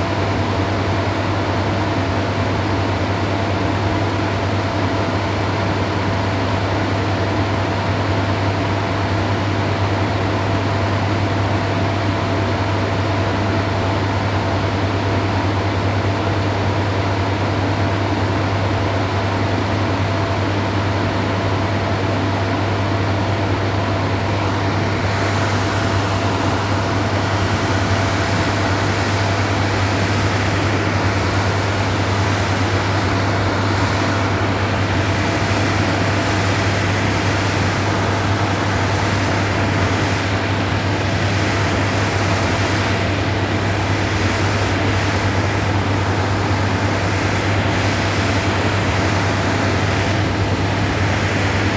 Poznan, Jezyce district, office - stand-alone AC
a ancient, stand-alone AC unit. looks and sounds as if it was able to bend time and space.
18 June, 17:21